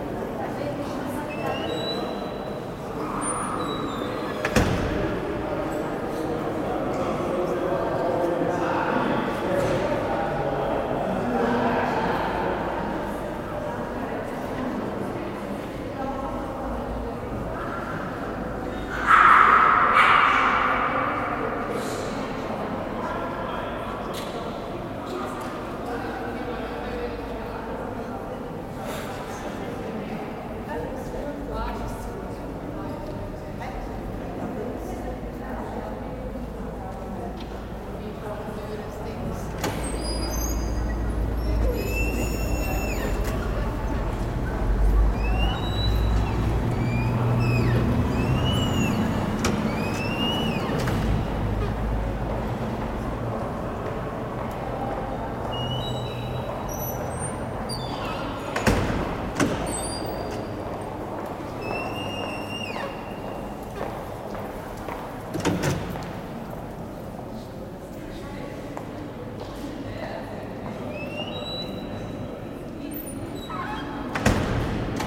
St. Gallen (CH), main station hall, sqeaking doors
half automatic entrance doors, huge swing doors made from wood, sqeaking. recorded june 16, 2008. - project: "hasenbrot - a private sound diary"
St. Gallen, Switzerland